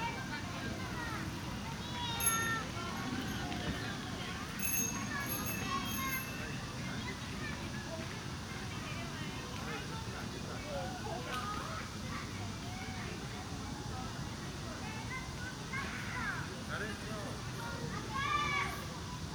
대한민국 서울특별시 서초구 양재2동 236 양재시민의숲 - Yangjae Citizens Forest, Children Playing, Cicada
Yangjae Citizens' Forest, Summer weekend. childrens playing traditional games, cicada
양재시민의숲, 여름 주말. 무궁화꽃이 피었습니다, 매미